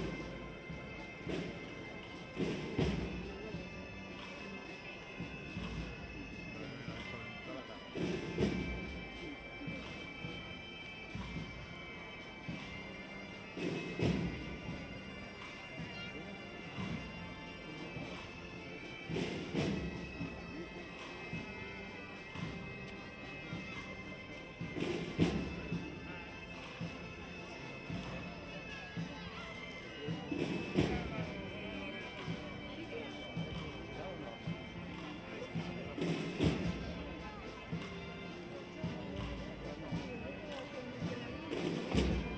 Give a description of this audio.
Procesión de la Pontifica, Real e Ilustre Cofradía de Nuestra Señora de las Angustias. During the Eaters, parishioners walk the streets in procession, dressed in tunics, carrying religious sculptures and playing pieces of music.